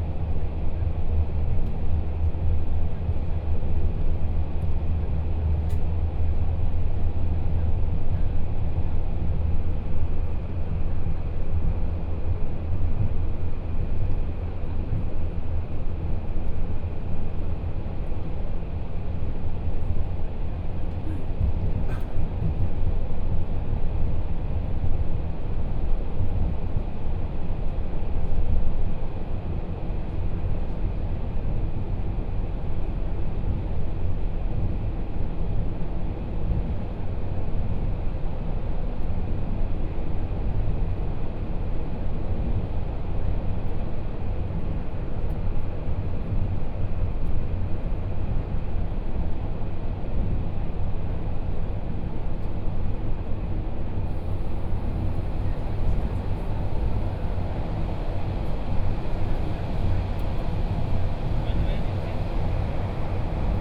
Beinan Township, Taitung County - Taroko Express
Train message broadcasting, Interior of the train, from Taitung Station to Shanli Station, Binaural recordings, Zoom H4n+ Soundman OKM II